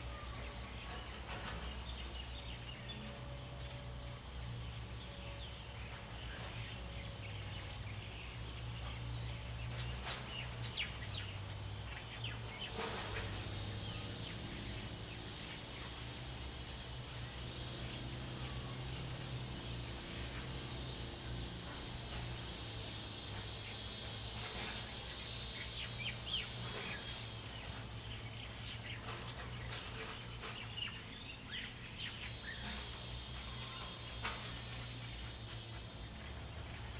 黃厝, Lieyu Township - Birds singing
Birds singing, Small village
Zoom H2n MS +XY